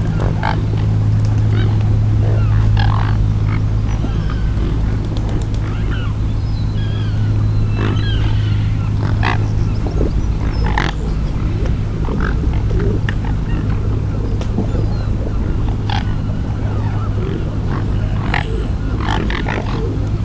Swans and Bells - Swan Sanctuary, Riverside, Worcester UK
A huge gathering of swans on the River Severn near the bridge in Worcester. Strangely the cathedral bourdon bell tolls more than 20 times. The swans are very close inspecting me and my equipment. A motor boat passes. Recorded with a Sound Devices Mix Pre 3 and 2 Sennheiser MKH 8020s.